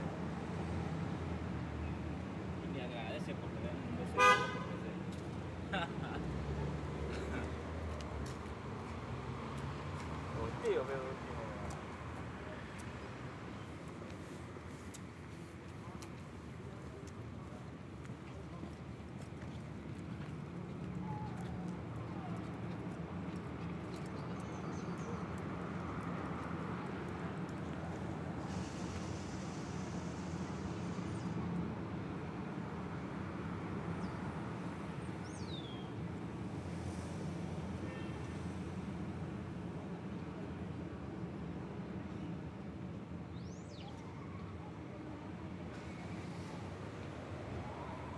Myrtle Ave/Forest Av, Queens, NY, USA - Myrtle Avenue
Street ambience sounds recorded on Myrtle Ave/Forest Ave on a Sunday afternoon.
Sounds of people walking, carts, cars and music.
United States, March 2022